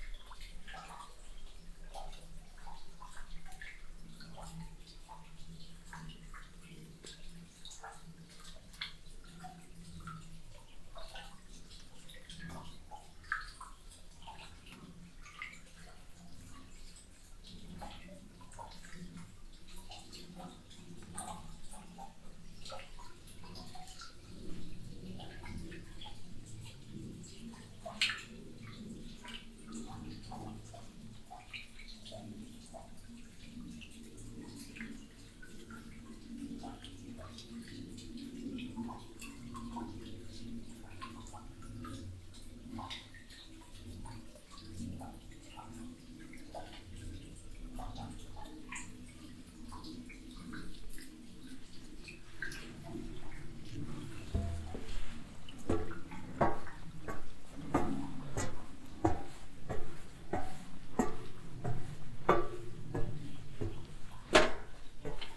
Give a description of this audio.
Descending a metal stairway to the entry of a drift to a former copper mine. The sound of the steps on the stairway and then the sound of dripping water that fills the floor of the entry level. Stolzemburg, alte Kupfermine, Eingang, Weg hinab auf einer Metalltreppe zum Eingang einer alten Kupfermine. Das Geräusch der Schritte auf den Stufen und dann von tropfendem Wasser, das den Boden am Eingangsniveau füllt. Stolzembourg, ancienne mine de cuivre, entrée, Descente d’un escalier métallique vers l’entrée d’une galerie de l’ancienne mine de cuivre. Le bruit des pas sur les marches puis le bruit de l’eau qui coule et s’accumule sur le sol au niveau de l’entrée.